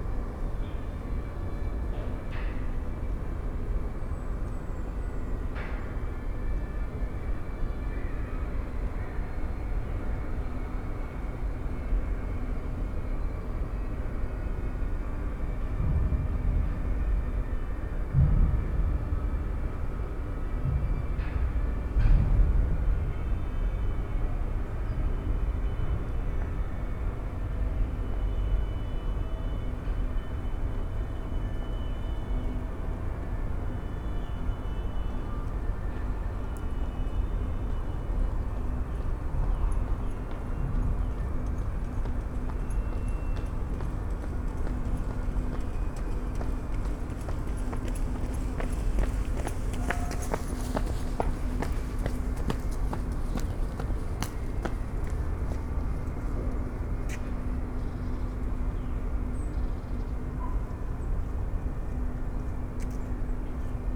Berlin, Plänterwald, Spree - moving, various sounds
moving around. various sounds around this spot: the power plant, a squeeking tree, pedestrians and joggers, the rusty big wheel of the abandoned spreepark funfair.
(tech note: SD702 DPA4060 binaural)